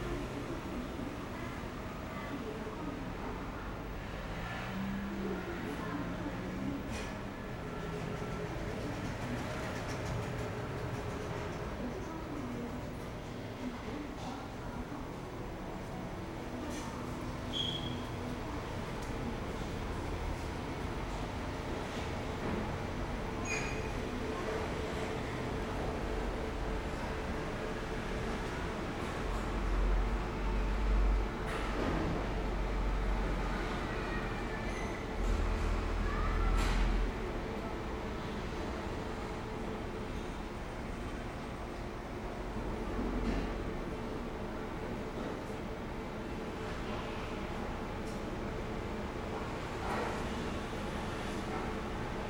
成功市場, Sanchong Dist., New Taipei City - In the market
walking In the market, Traffic Sound
Zoom H4n +Rode NT4
New Taipei City, Taiwan, 13 February 2012, ~14:00